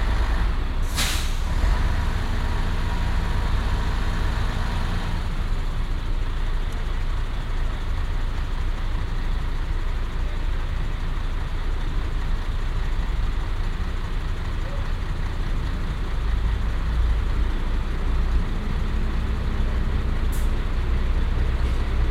{"title": "haan, zum alten güterbahnhof, div. fahrzeuge", "description": "aufnahme nachmittags im frühjahr 07\nsoundmap nrw:\nsocial ambiences, topographic fieldrecordings", "latitude": "51.19", "longitude": "7.00", "altitude": "135", "timezone": "GMT+1"}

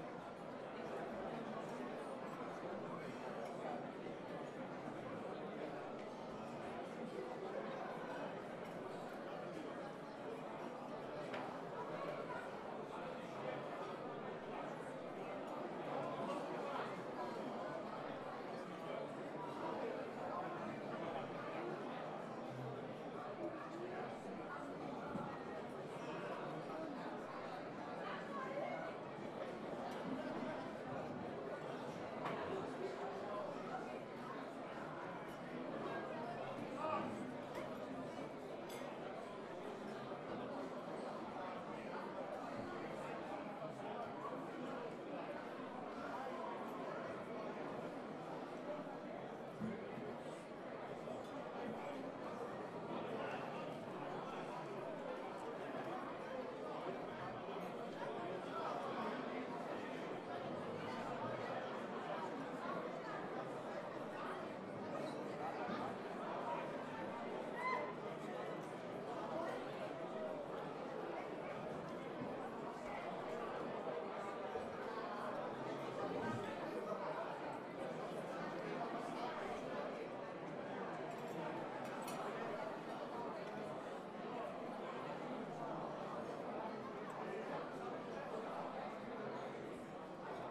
{"title": "Brewery Gaffel Cologne", "date": "2010-04-01 19:15:00", "description": "\"Feierabend\" Leiure-time at a brewery in Cologne.", "latitude": "50.94", "longitude": "6.96", "altitude": "59", "timezone": "Europe/Berlin"}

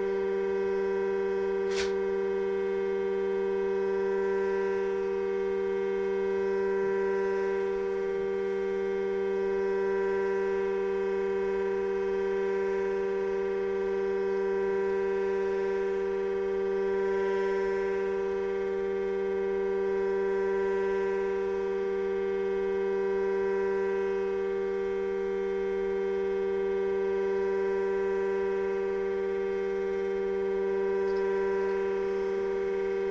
{"title": "Sound Room In Marjaniemi, Hailuoto, Finnland - line tilt instalation 02", "date": "2012-05-24 12:42:00", "latitude": "65.04", "longitude": "24.56", "altitude": "8", "timezone": "Europe/Helsinki"}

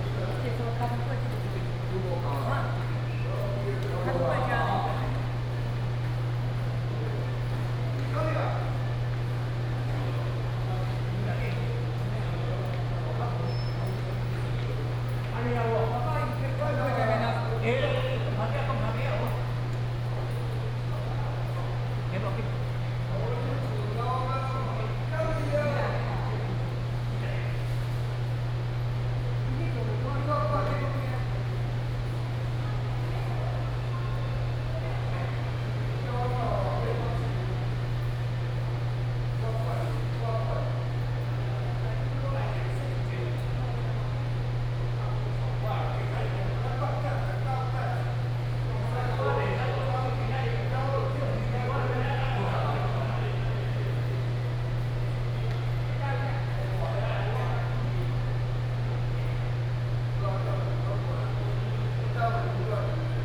{"title": "Keelung Cultural Center, Keelung City - In the hall", "date": "2016-07-16 18:43:00", "description": "In the hall, Group of elderly people in the chat, Air conditioning noise, Traffic Sound", "latitude": "25.13", "longitude": "121.74", "altitude": "14", "timezone": "Asia/Taipei"}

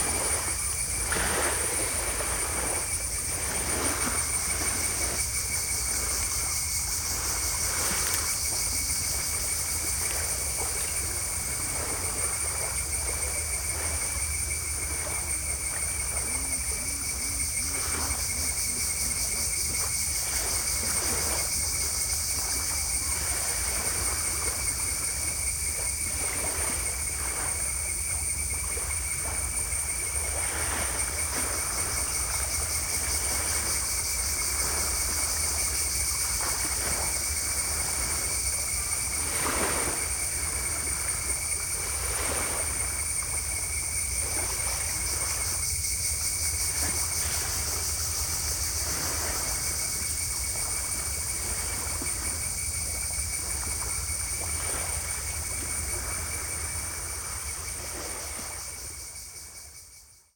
{"title": "Koh Tong, Thailand - drone log 02/03/2013", "date": "2013-03-02 15:32:00", "description": "cicadas, sea, distand boat\n(zoom h2, binaural)", "latitude": "6.52", "longitude": "99.19", "altitude": "25", "timezone": "Asia/Bangkok"}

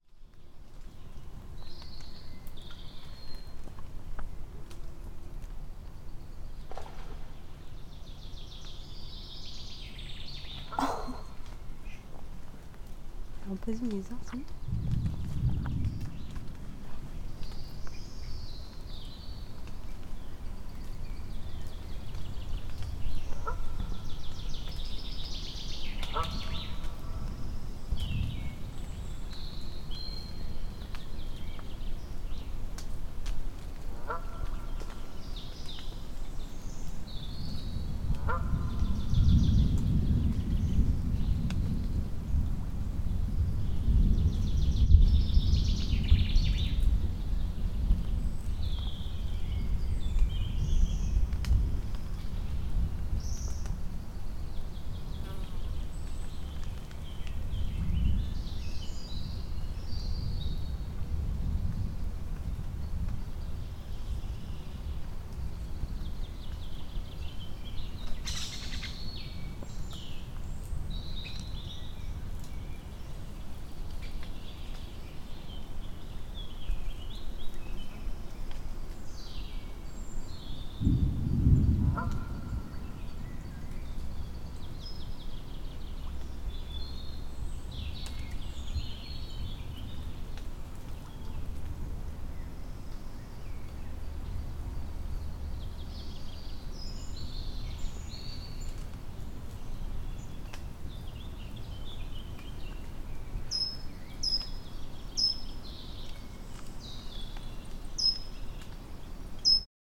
{"title": "Millemont, France - Millemont's forest", "date": "2018-05-28 15:12:00", "description": "Nice soundscape of the Millemont's forest around a lake, in may 2018.", "latitude": "48.82", "longitude": "1.71", "altitude": "140", "timezone": "Europe/Paris"}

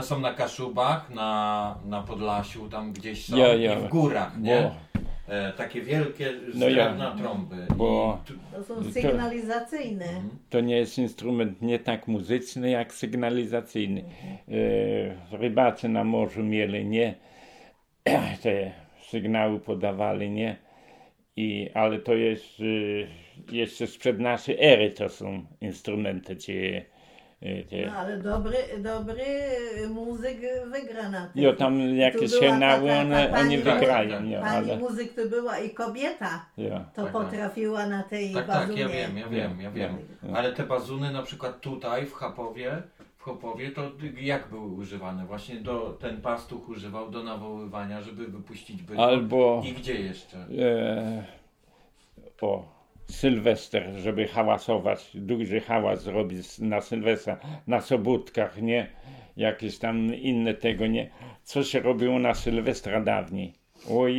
{"title": "Hopowo, Polska - Opowieść o sygnałach pożarowych, The story about the fire sygnals", "date": "2014-06-14 12:16:00", "description": "Dźwięki nagrane w ramach projektu: \"Dźwiękohistorie. Badania nad pamięcią dźwiękową Kaszubów.\" The sounds recorded in the project: \"Soundstories. Investigating sonic memory of Kashubians.\"", "latitude": "54.25", "longitude": "18.23", "altitude": "231", "timezone": "Europe/Warsaw"}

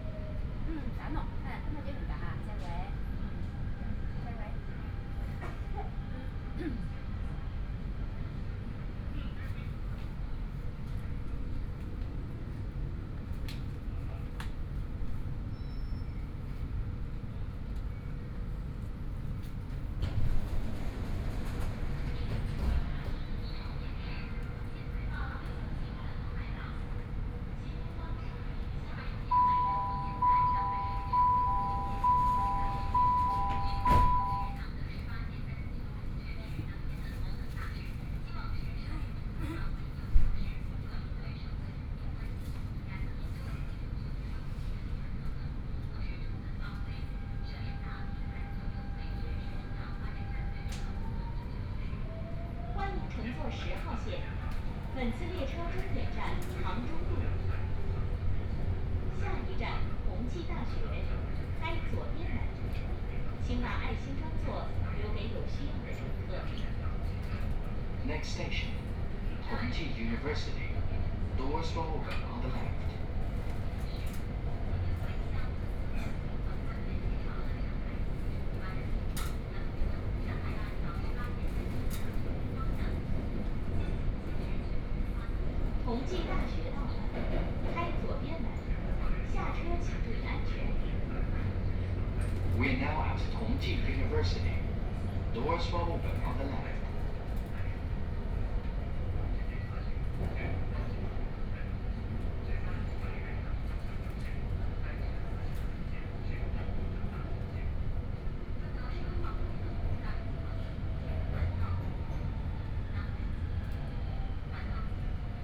{"title": "Yangpu District, Shanghai - Line 10 (Shanghai Metro)", "date": "2013-11-28 12:48:00", "description": "from Wujiaochang station to Siping Road station, Binaural recording, Zoom H6+ Soundman OKM II", "latitude": "31.29", "longitude": "121.50", "altitude": "15", "timezone": "Asia/Shanghai"}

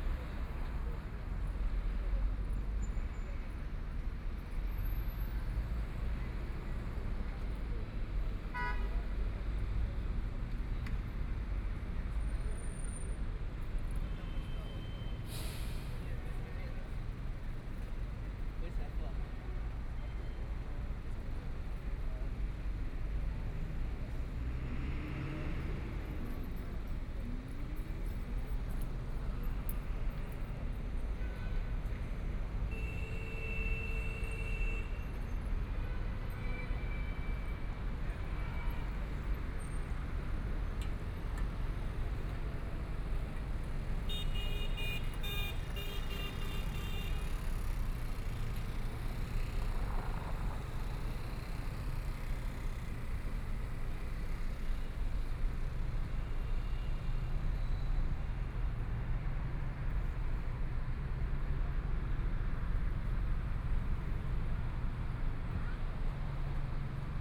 {"title": "國定路, Shanghai - Convenience store", "date": "2013-11-20 21:20:00", "description": "In convenience stores, Corner, walking in the Street, traffic sound, Binaural recording, Zoom H6+ Soundman OKM II", "latitude": "31.30", "longitude": "121.51", "altitude": "7", "timezone": "Asia/Shanghai"}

